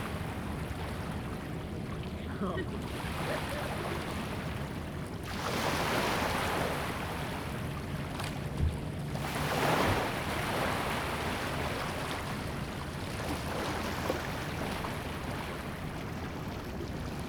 Tamsui River, New Taipei City - high tide
Sound tide, Small pier, Riparian is slowly rising tide, Air conditioning noise
Zoom H2n MS+XY
7 April 2016, 20:56